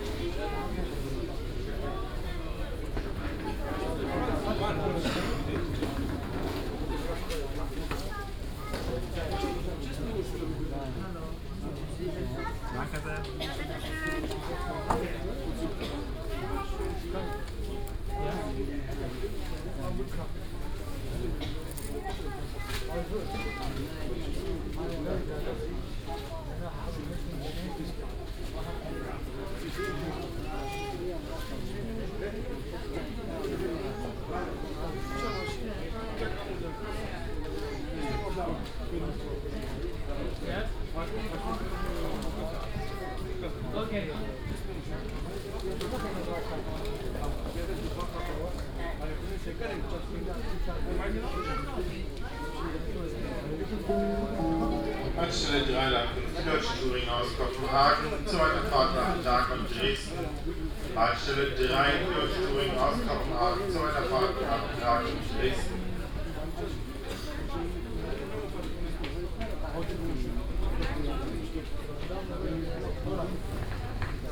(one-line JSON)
{"title": "Berlin, Westend, Zentraler Omnibusbahnhof Berlin - waiting hall", "date": "2014-12-02 19:35:00", "description": "ambience of the crowded waiting hall of ZOB. People of many nationalities getting their tickets, checking in, waiting for their bus to arrive or maybe just sitting there having nowhere to go on this frosty evening.", "latitude": "52.51", "longitude": "13.28", "altitude": "50", "timezone": "Europe/Berlin"}